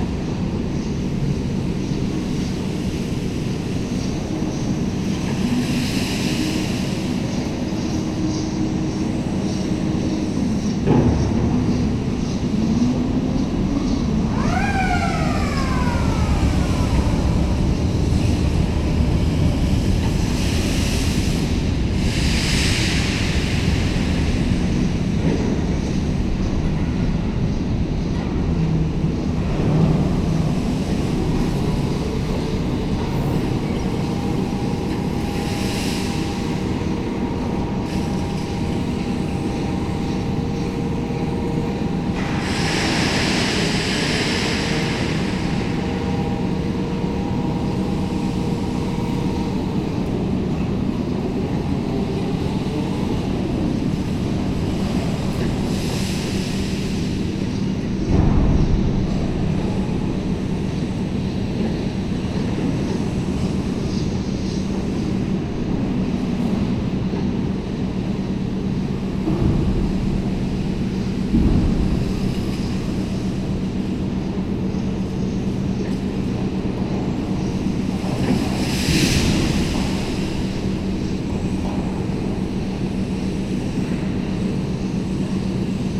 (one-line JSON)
{"title": "Charleroi, Belgium - Industrial soundscape", "date": "2018-08-15 09:50:00", "description": "Industrial soundscape near the Thy-Marcinelle wire-drawing plant, a worker moving an enormous overhead crane, and charging rolls of steel into an empty boat.", "latitude": "50.41", "longitude": "4.43", "altitude": "104", "timezone": "GMT+1"}